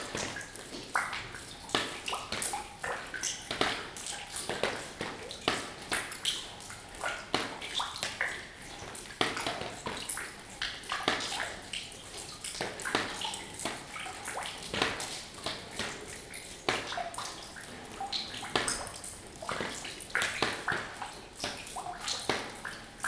Nida, Lithuania - Abandoned Hotel
Recordist: Raimonda Diskaitė
Description: Inside an empty, defunct hotel on a rainy day. Water drops falling into an empty bucket and on the floor. Recorded with ZOOM H2N Handy Recorder.